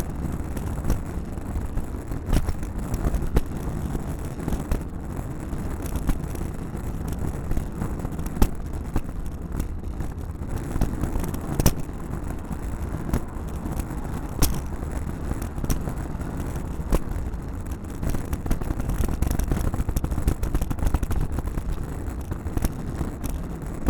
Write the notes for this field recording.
Recorded as part of the 'Put The Needle On The Record' project by Laurence Colbert in 2019.